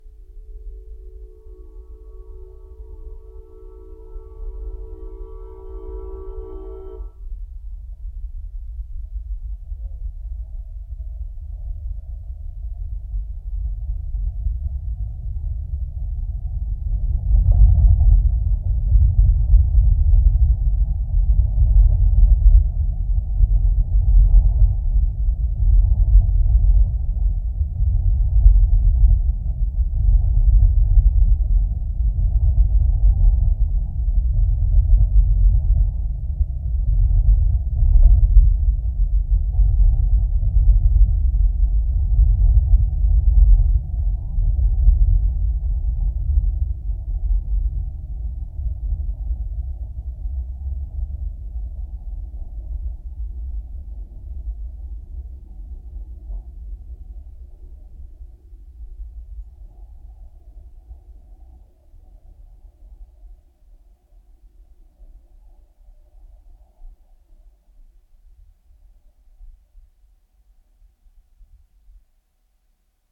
{
  "title": "WF&P Rail Yard, Wildwood, Missouri, USA - WF&P Railway",
  "date": "2021-08-15 11:45:00",
  "description": "Recording of Wabash, Frisco and Pacific 12 inch gauge train entering rail yard from geophone attached to metal support of picnic bench resting on the ground.",
  "latitude": "38.54",
  "longitude": "-90.62",
  "altitude": "131",
  "timezone": "America/Chicago"
}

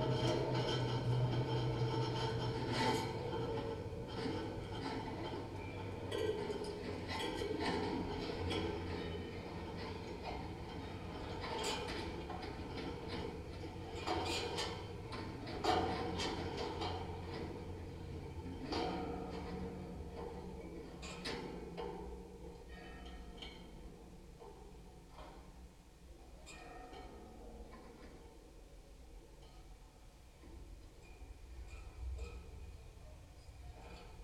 {
  "title": "Lithuania, Vilnius, construction fence",
  "date": "2012-11-06 14:50:00",
  "description": "contact microphones on construction fence",
  "latitude": "54.69",
  "longitude": "25.29",
  "altitude": "100",
  "timezone": "Europe/Vilnius"
}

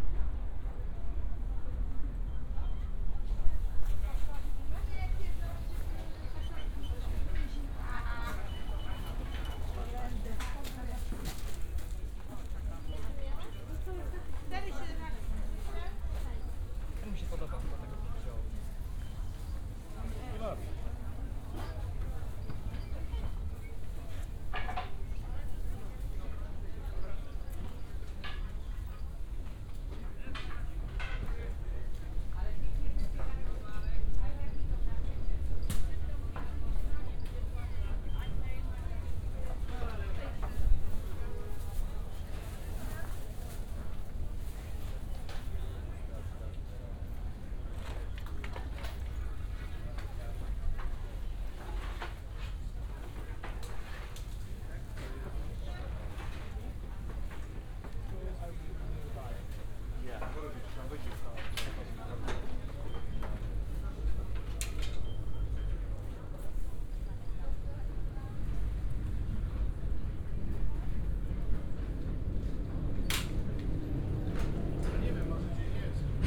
{
  "title": "Poznan, Wilda district, Wilda market - before closing time",
  "date": "2015-09-12 13:39:00",
  "description": "(binaural) walking around Wilda market on a Saturday afternoon. the place is not busy anymore in this time of the day. almost all vendors are still there but you can sense they are about to close their stands. already sorting things to pack while serving last customers. (Luhd PM-01 into sony d50)",
  "latitude": "52.39",
  "longitude": "16.92",
  "altitude": "73",
  "timezone": "Europe/Warsaw"
}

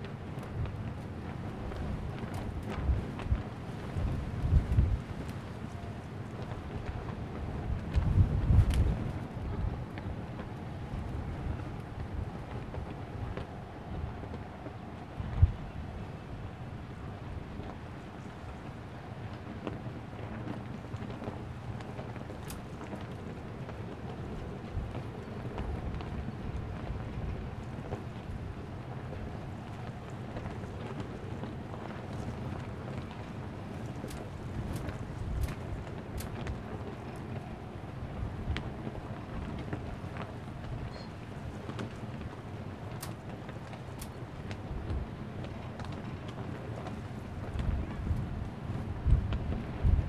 {
  "title": "Sharjah - United Arab Emirates - Very large flag in the wind and rain",
  "date": "2017-02-08 14:30:00",
  "description": "Another windy day in UAE so I recorded the 7th largest flagpole in the world (123 metres). Zoom H4N (sadly became broken on this trip!)",
  "latitude": "25.35",
  "longitude": "55.38",
  "timezone": "Asia/Dubai"
}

